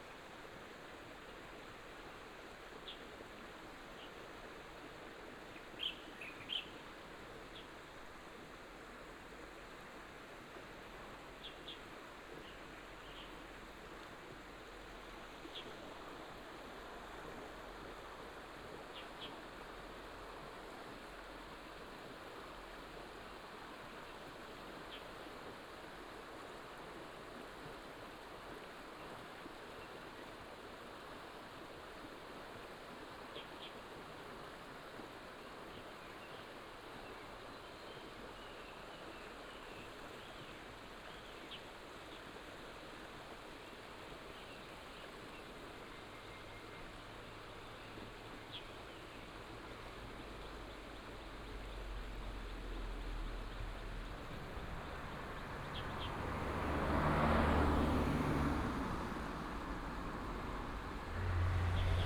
{"title": "楓港溪, 南迴公路, Shizi Township - Morning next to the highway", "date": "2018-03-28 06:07:00", "description": "Beside the road, stream, in the morning, Traffic sound, Bird call, Morning next to the highway\nBinaural recordings, Sony PCM D100+ Soundman OKM II", "latitude": "22.21", "longitude": "120.78", "altitude": "139", "timezone": "Asia/Taipei"}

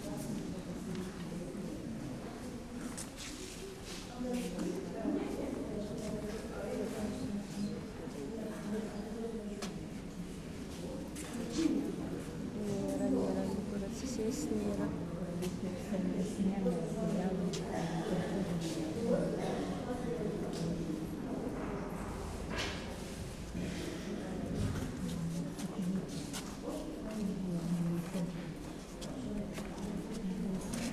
{"title": "Utena, Lithuania, church, at entrance", "date": "2013-07-27 10:15:00", "latitude": "55.49", "longitude": "25.61", "altitude": "109", "timezone": "Europe/Vilnius"}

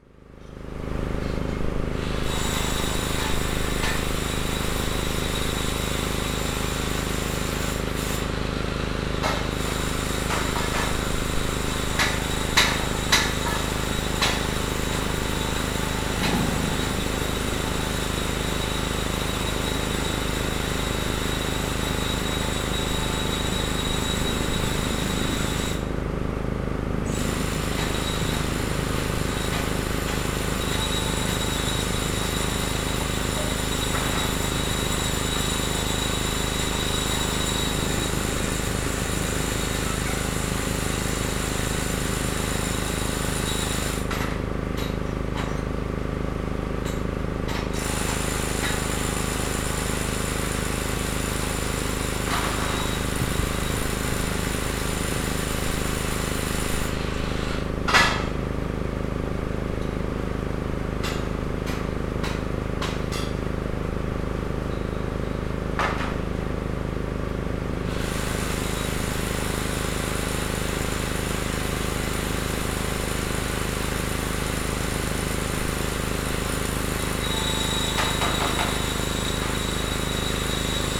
{"title": "Allée Marcel Mailly, Aix-les-Bains, France - Travaux pont noir", "date": "2022-07-12 11:40:00", "description": "Travaux sous le pont de chemin de fer qui enjambe le Sierroz.", "latitude": "45.70", "longitude": "5.89", "altitude": "239", "timezone": "Europe/Paris"}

